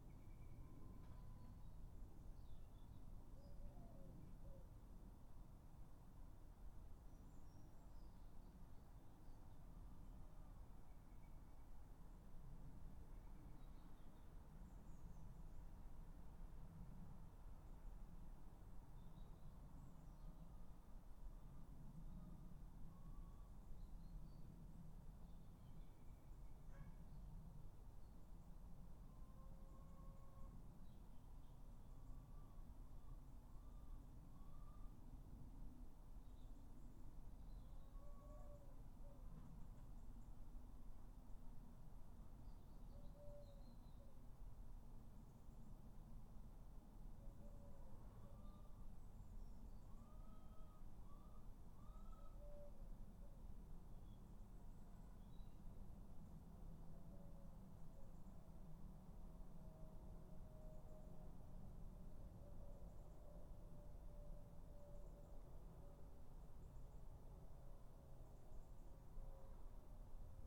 3 minute recording of my back garden recorded on a Yamaha Pocketrak
Solihull, UK, 13 August